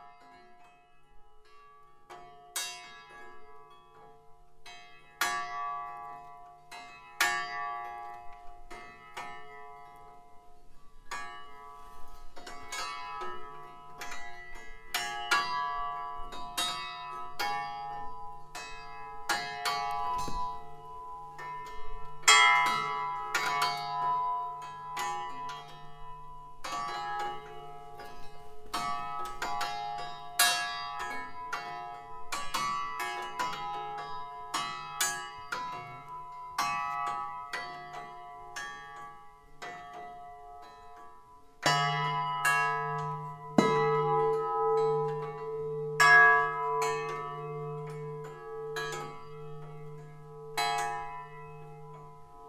Fameck, France - Alarm
Into the underground mine, I'm ringing a mine alarm. In the past, when the miners were ready to explode the ore, they were ringing an alarm. It was intended to inform about the danger. It's simply a rail hooked to a wire mesh. I'm ringing it with a iron bar. The rail wire makes a strange music which accompanies the hits. It's a forgotten sound. In fact, it's a sound from the past.
14 January, 19:30